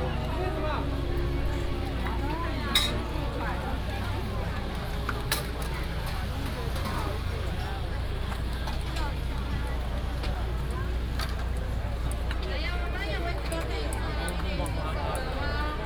楊明夜市, Yangmei Dist. - night market
night market, vendors peddling